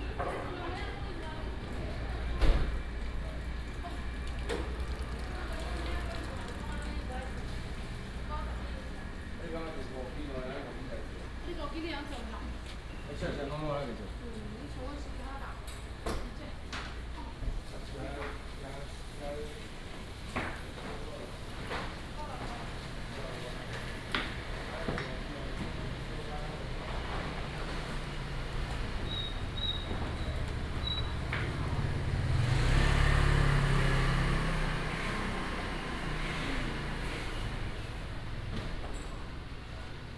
Futai St., Taishan Dist., New Taipei City - walking in the Street
bird sound, Traffic sound, Preparing for market operation, Traditional market
Taishan District, 福泰街5-49號, 6 May